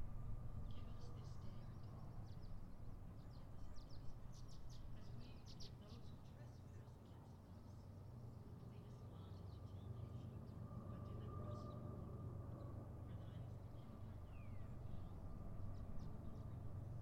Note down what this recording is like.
The Methodist Church sits at the bottom of the hill as you drive into our neighborhood. This morning, they held Easter Service in the parking lot. It was calm, with only a slight breeze. Sounds from the service- music, worship, and the prayers for those suffering during the pandemic- drifted up the street towards my house and mixed with the sounds of birds, kids walking dogs, passing cars, distant freight train moving through our little town, and even the Easter bunny passing by on his harley. Warm sun and blue skies a welcome change after the long, grey winter in the PNW. I used a TASCAM DR-40, which was a gift from my mentor at the low power, volunteer radio station KXRW Vancouver. I mounted it to a PVC pipe, and placed it on the tripod of an old music stand.